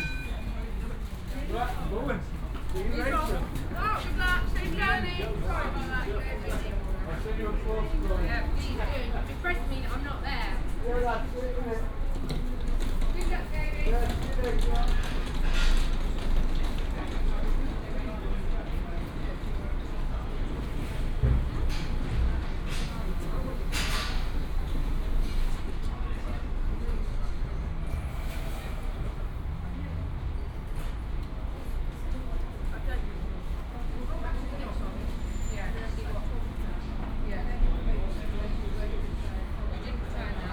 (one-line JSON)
{"title": "Gloucester Rd./Chain Alley, Oxford - bus station ambience", "date": "2014-03-11 14:20:00", "description": "waiting for someone at Oxford bus station\n(Sony D50, OKM2)", "latitude": "51.75", "longitude": "-1.26", "timezone": "Europe/London"}